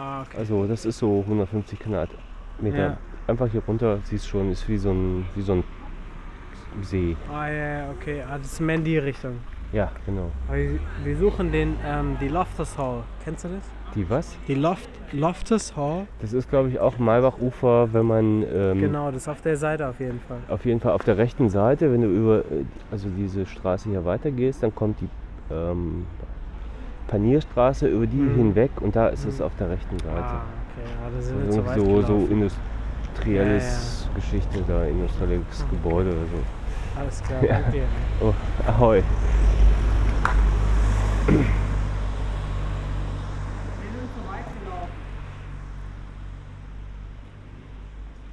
Berlin: Vermessungspunkt Friedelstraße / Maybachufer - Klangvermessung Kreuzkölln ::: 11.05.2013 ::: 02:57
11 May 2013, 02:57